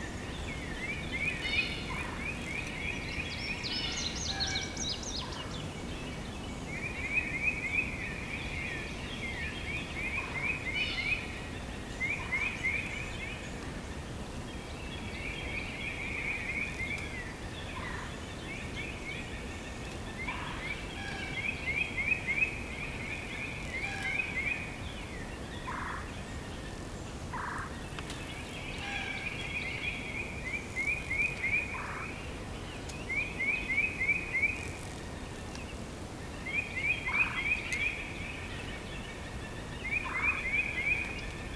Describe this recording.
A small forest during the spring in Missouri. Some birds are singing. Sound recorded by a MS setup Schoeps CCM41+CCM8 Sound Devices 788T recorder with CL8 MS is encoded in STEREO Left-Right recorded in may 2013 in Missouri, USA.